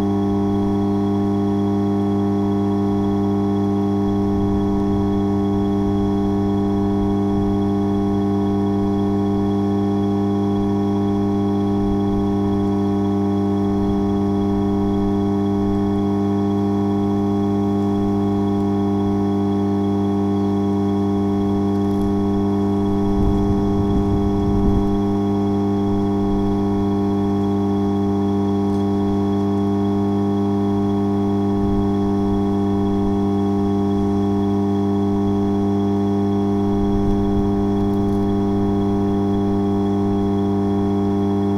Morasko UAM campus, Poznan - transformer and gas tanks
recorded between a big transformer and a few mobile containers attached to the university building. you can hear the transformer on the left side and a hissing sound coming from the containers on the right side (roland r-07)
Poznań, Poland, 24 March 2019, 14:48